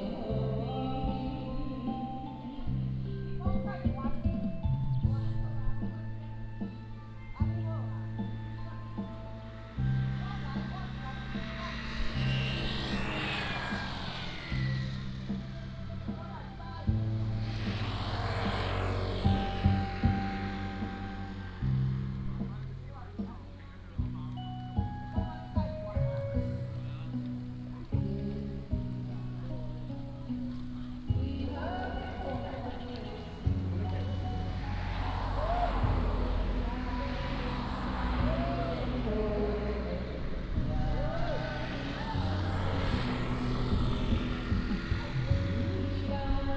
小墾丁度假村, 滿州鄉屏東縣 - Shop by the highway
Bird cry, Traffic sound, Shop by the highway, Karaoke, Dog barking
Pingtung County, Taiwan, 2 April